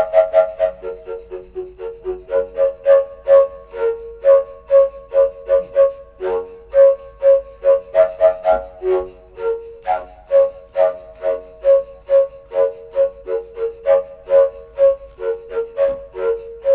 wasserorchester, pumporgel 01
H2Orchester des Mobilen Musik Museums - Instrument Pumporgel - temporärer Standort - VW Autostadt
weitere Informationen unter